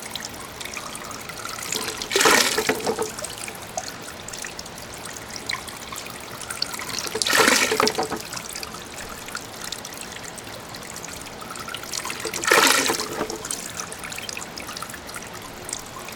Salvinsac, Mecanic fountain
France, Lozere, fountain
France, 23 July 2007, 23:56